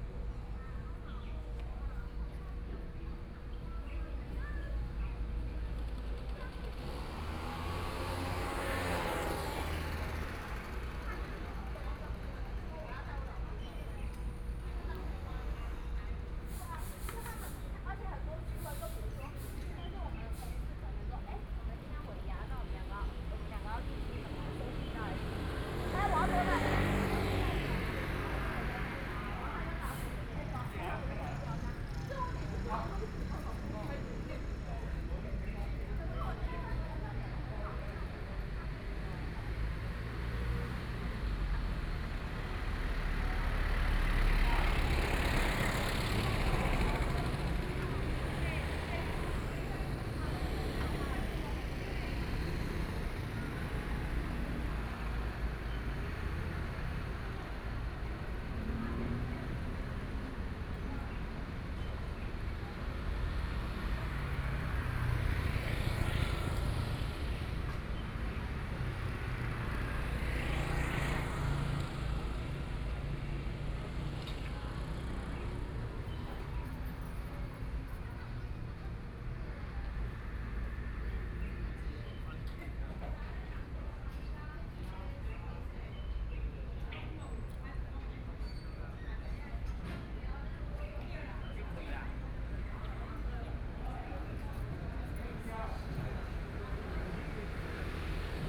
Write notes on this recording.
Standing on the roadside, Traffic Sound, Tourist, Birdsong, Binaural recordings, Sony PCM D50 + Soundman OKM II